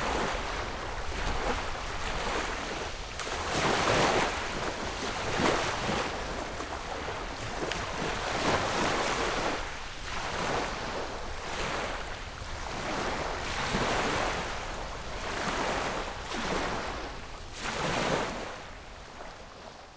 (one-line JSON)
{"title": "鎮海村, Baisha Township - Sound of the waves", "date": "2014-10-22 10:04:00", "description": "Sound of the waves, Small beach\nZoom H6 Rode NT4", "latitude": "23.64", "longitude": "119.60", "altitude": "6", "timezone": "Asia/Taipei"}